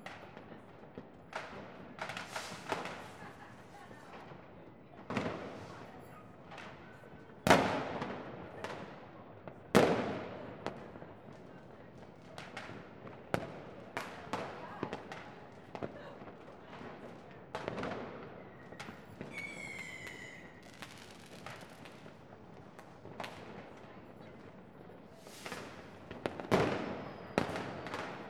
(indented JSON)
{
  "title": "Soldiner Str, Berlin, Germany - New Year's Eve fireworks",
  "date": "2013-01-01 00:15:00",
  "description": "Various fireworks exploding up close and in the distance. Recording is made from a balcony so there is some very quiet voices in the the background.",
  "latitude": "52.56",
  "longitude": "13.38",
  "altitude": "46",
  "timezone": "Europe/Berlin"
}